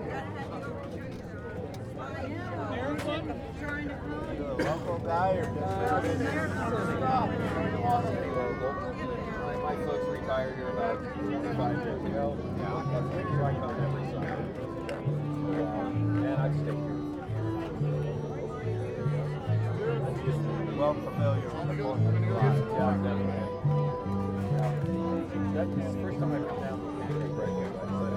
neoscenes: fiddlers at pancake breakfast
AZ, USA